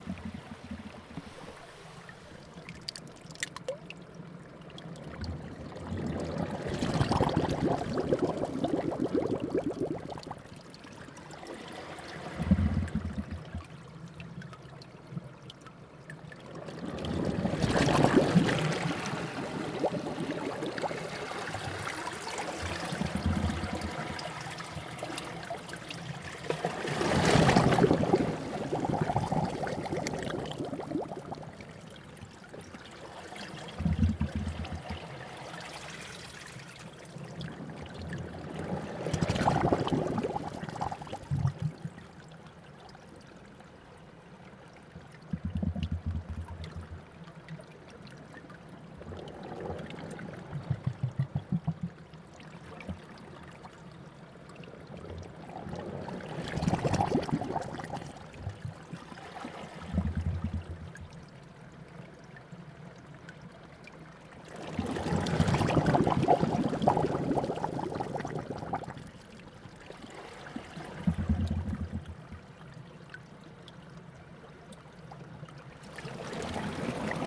Minnehaha Avenue, Takapuna, Auckland, New Zealand - thorne bay lake outlet
Thorne Bay outflow of Lake Pupuke, lake water flowing into inter-tidal zone